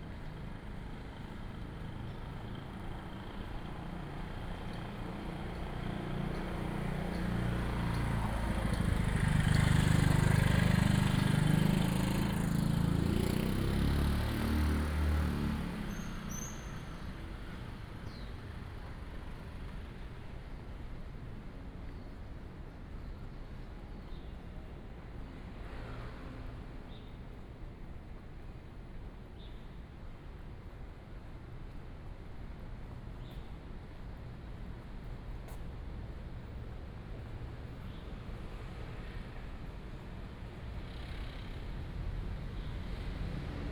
Ln., Sec., Heping E. Rd., Da’an Dist., Taipei City - walking in the Street
walking in the Street
Da’an District, Taipei City, Taiwan, 28 July 2015